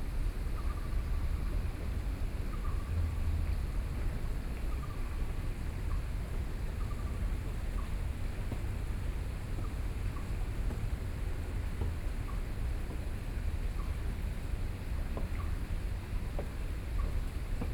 Taipei Botanical Garden - Hot and humid afternoon
Hot and humid afternoon, in the Botanical Garden, Sony PCM D50 + Soundman OKM II
Zhongzheng District, Taipei City, Taiwan, 13 September 2013